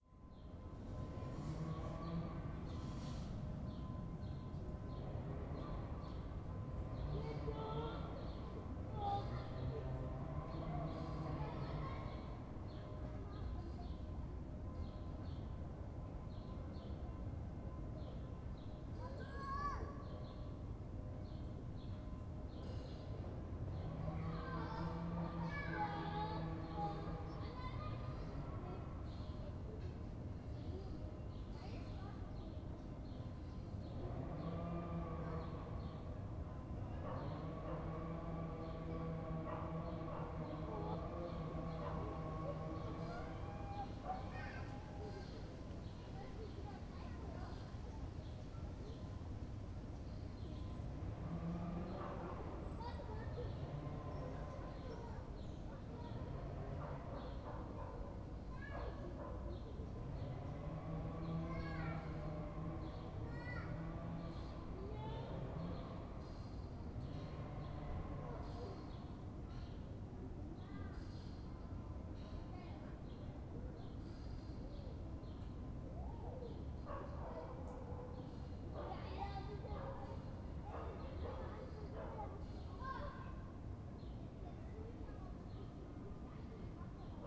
church bells, kids from the nearby playground, wood cutters cutting wood, barking dog
the city, the country & me: august 3, 2011
Berlin, Germany, 3 August 2011, 17:48